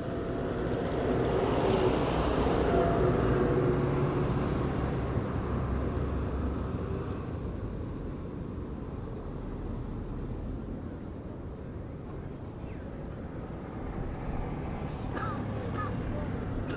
San Jacinto de Buena Fe, Ecuador - Buena Fe main street.
While waiting the bus. Tascam DR100